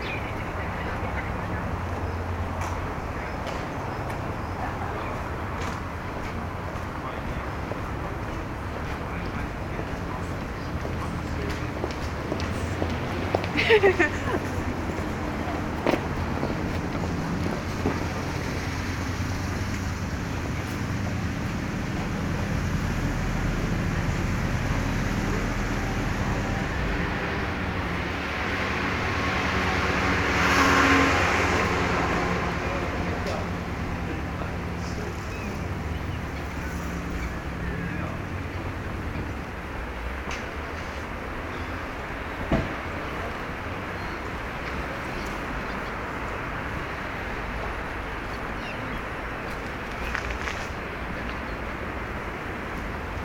Planufer, Berlin, Deutschland - Soundwalk Planufer
Soundwalk: Along Planufer until Grimmstrasse
Friday afternoon, sunny (0° - 3° degree)
Entlang der Planufer bis Grimmstrasse
Freitag Nachmittag, sonnig (0° - 3° Grad)
Recorder / Aufnahmegerät: Zoom H2n
Mikrophones: Soundman OKM II Klassik solo
Berlin, Germany, 9 February 2018, 15:00